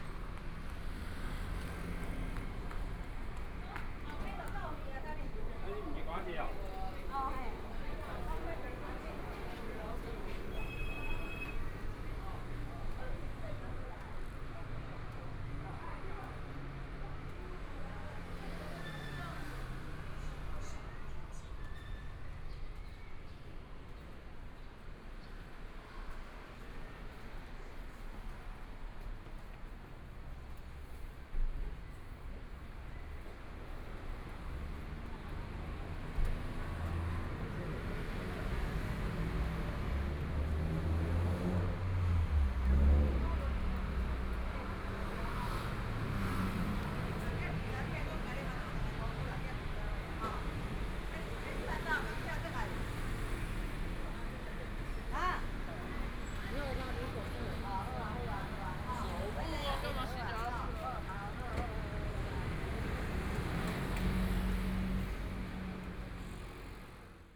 {"title": "Jilin Rd., Taipei City - walking on the Road", "date": "2014-02-15 17:54:00", "description": "walking on the Road, Pedestrian, Traffic Sound, Motorcycle sound, Walking in the direction of the south\nBinaural recordings, ( Proposal to turn up the volume )\nZoom H4n+ Soundman OKM II", "latitude": "25.06", "longitude": "121.53", "timezone": "Asia/Taipei"}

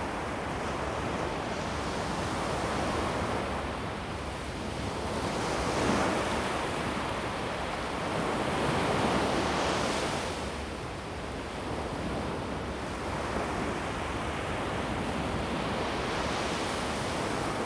{"title": "西子灣海水浴場, Kaohsiung County - Beach", "date": "2016-11-22 14:48:00", "description": "Sound of the waves, Beach\nZoom H2n MS+XY", "latitude": "22.62", "longitude": "120.26", "altitude": "1", "timezone": "Asia/Taipei"}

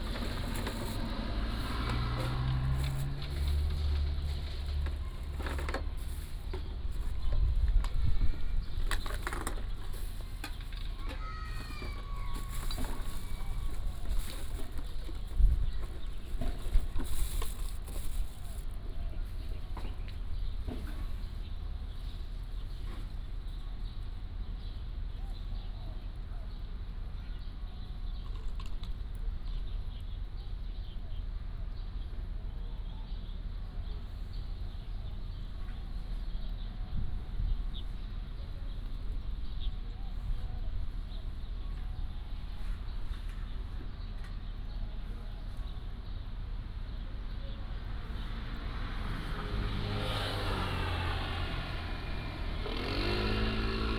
{"title": "Qingshui Rd., Nangan Township - Small village", "date": "2014-10-14 09:15:00", "description": "In the corner of the road, Small village, Traffic Sound", "latitude": "26.15", "longitude": "119.94", "altitude": "13", "timezone": "Asia/Taipei"}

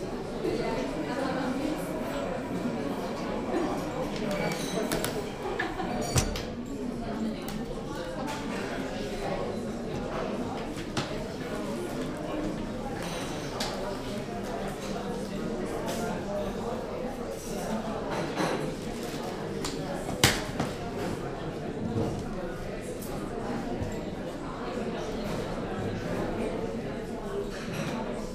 {"title": "munich - stadtcafe", "date": "2010-11-18 14:45:00", "description": "munich, coffee, museum, phone call", "latitude": "48.13", "longitude": "11.57", "timezone": "Europe/Berlin"}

